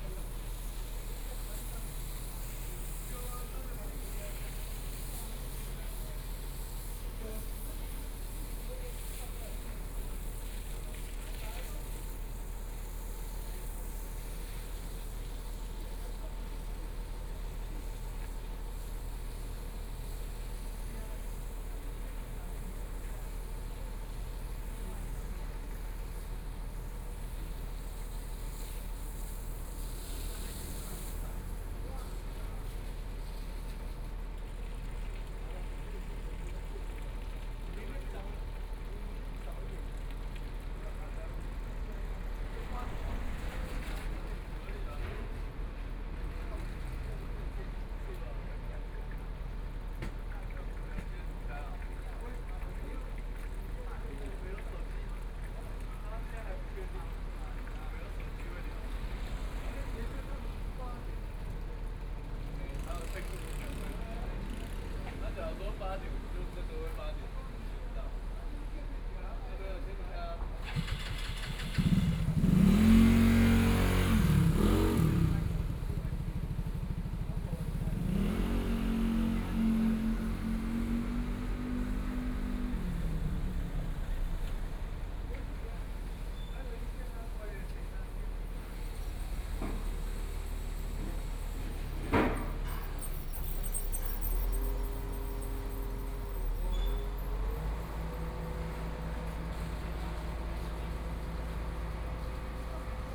Zhongshan District, Taipei City - Construction site
Construction Sound, Traffic Sound, Binaural recordings, Zoom H4n+ Soundman OKM II